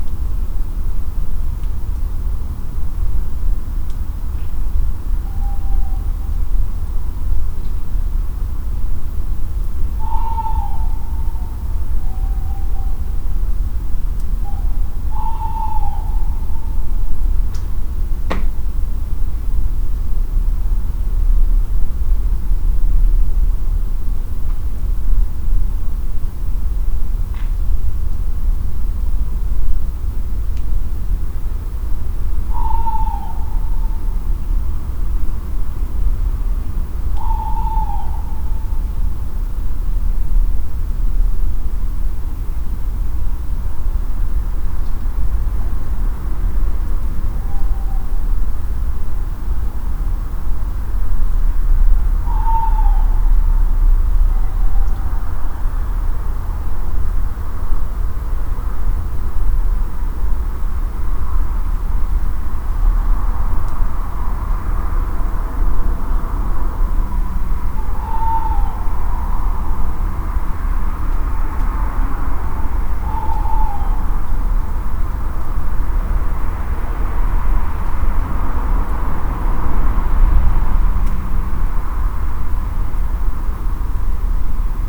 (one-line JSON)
{"title": "Recordings in the Garage, Malvern, Worcestershire, UK - AMBIENT + OWLS", "date": "2021-10-06 02:26:00", "description": "2am and the owls are calling again. It seems OK but not perfect.", "latitude": "52.08", "longitude": "-2.33", "altitude": "120", "timezone": "Europe/London"}